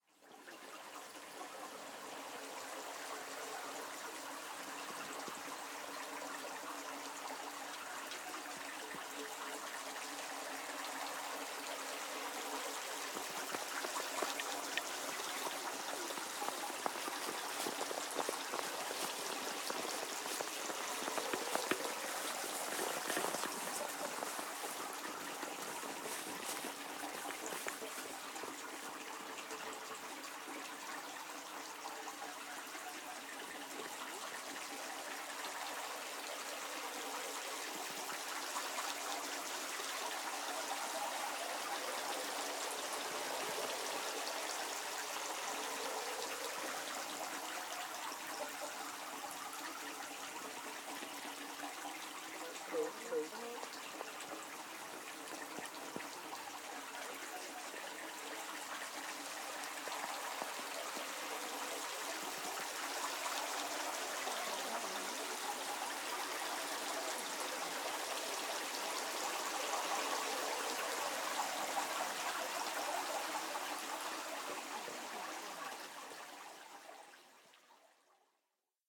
Dalfsen, The Netherlands - Fieldrecording At Huize Den Berg
Fieldrecording at the forest/estate of Huize Den Berg during Winter